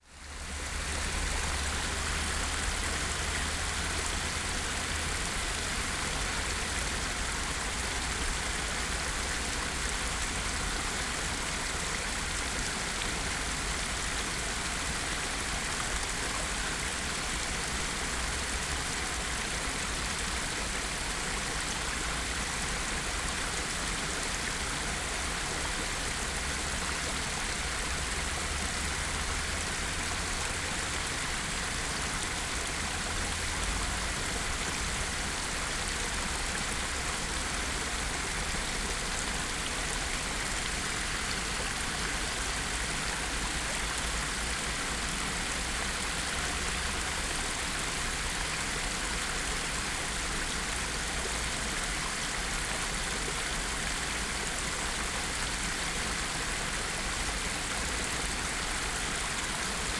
Maribor, Pekrski potok - small creek
Pekrski potok comes from the Pohorje mountains and flows through parts of the city. the little stream isn't in a good condition
(SD702 DPA4060)